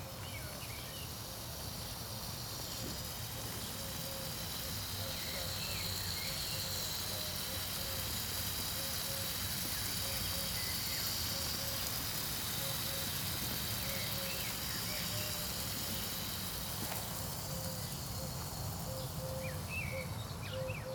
Pedios Areos park, Athen - watering devices
inside the park, various watering installations nearby, some of them sort of disfunctional, but running...
(Sony PCM D50 internal mics)
Athina, Greece, 7 April 2016, 11:10